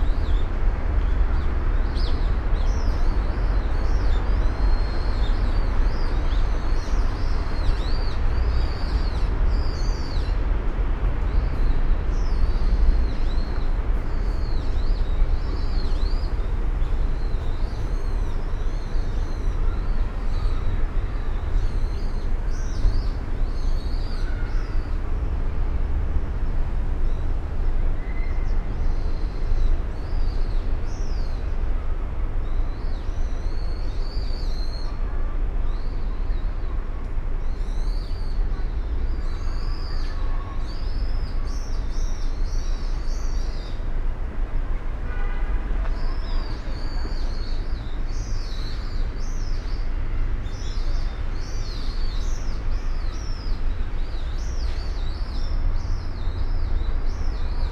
tea house, Shoseien, Kyoto - still
gardens sonority
tea house above still water
red pail, full of rain
it will start again soon enough
Kyōto-fu, Japan, 31 October